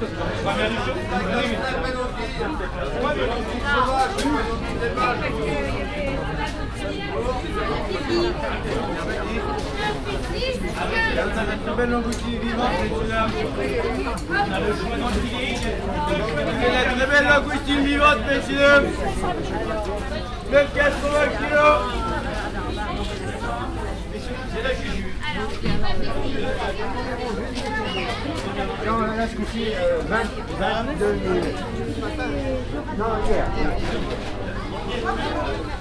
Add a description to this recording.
Indoor market. Short soundwalk around the stalls